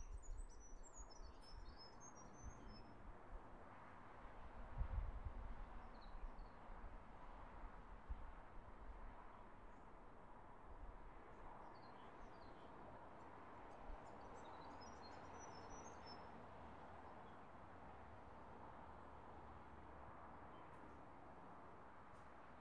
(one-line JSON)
{"title": "Rye VIC, Australia - Spring Day", "date": "2014-10-03 13:00:00", "description": "Birds singing and cars passing in a secluded area behind some trees, near the beach.\nZoom H4n", "latitude": "-38.37", "longitude": "144.79", "altitude": "9", "timezone": "Australia/Melbourne"}